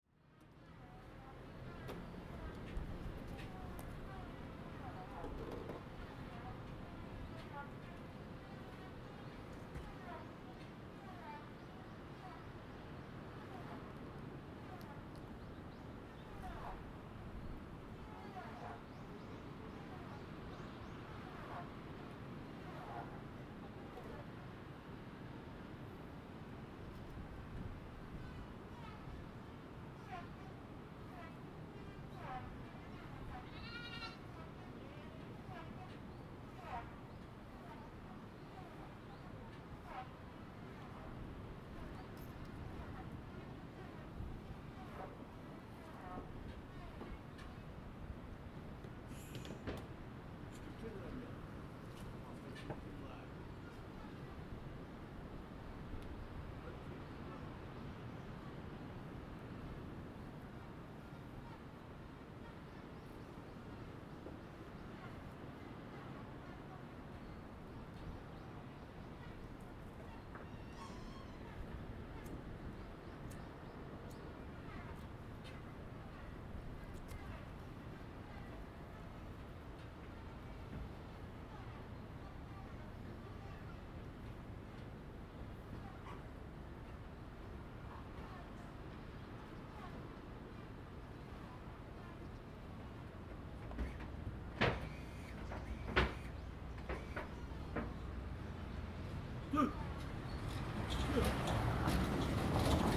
In the side of the road, Traditional Aboriginal tribe, Traffic Sound
Zoom H2n MS +XY